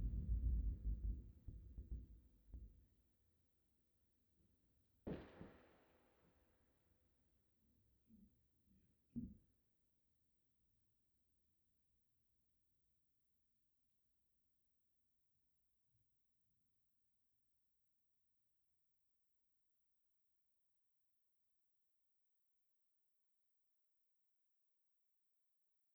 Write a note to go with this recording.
Inside the hall 21 of the alte Farbwerke, during the performance of the piece Preparatio Mortis by Jan Fabre at the asphalt festival 2014. The sound of organ music. soundmap nrw - topographic field recordings, social ambiences and art places